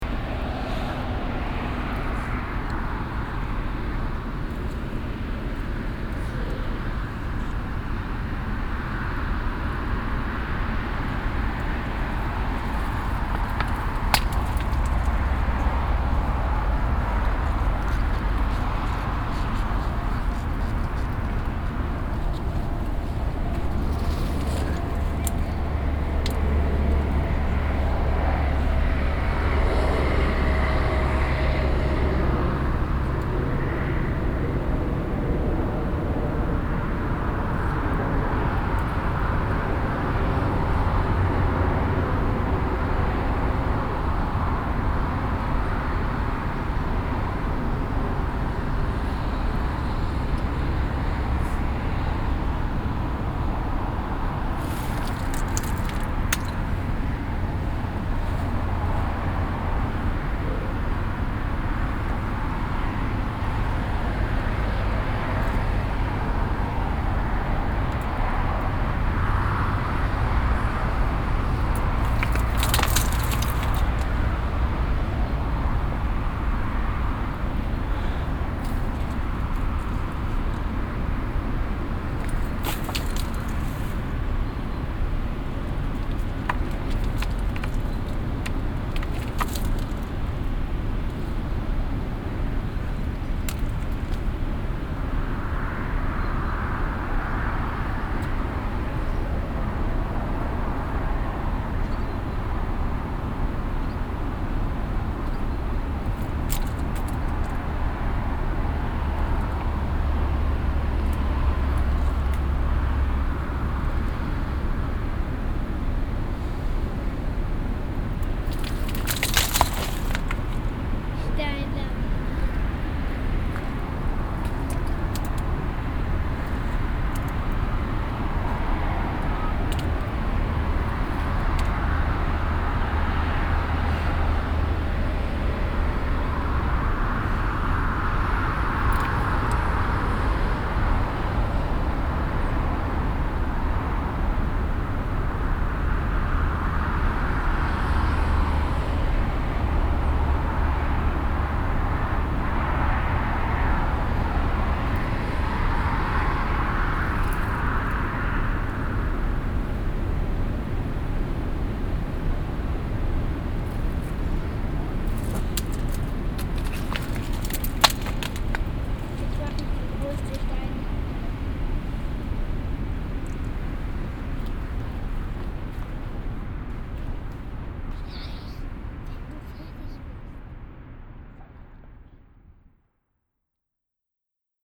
Another recording in the same area. The sound of loose slate stones inside the vineyard. In the background the traffic from the nearby main street and the voice of a child playing with the stones.
soundmap d - topographic field recordings and social ambiences